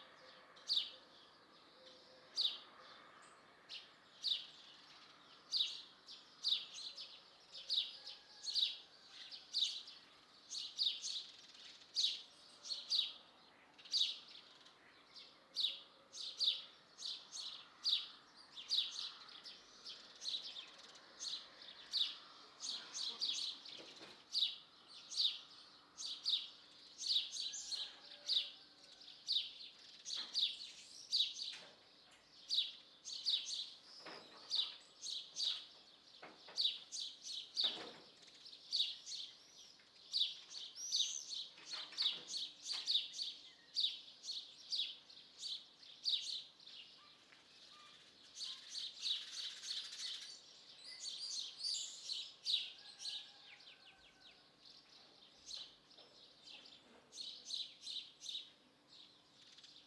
Carrer Tramuntana, Bellcaire d'Empordà, Girona, Espagne - Bellcaire d'Empordà, Girona, Espagne
Bellcaire d'Empordà, Girona, Espagne
Ambiance du matin
Prise de sons : JF CAVRO - ZOOM H6